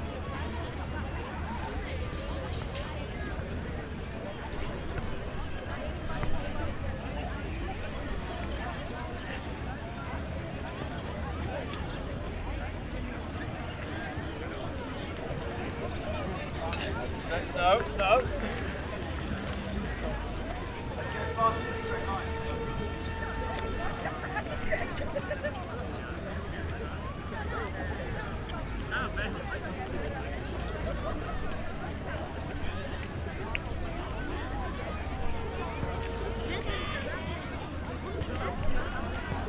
Infopoint, Tempelhofer Feld, Berlin - summer weekend ambience
summer weekend evening, loads of people gather at one of the barbeque areas on former Tempelhof airport.
(SD702, Audio Technica BP4025)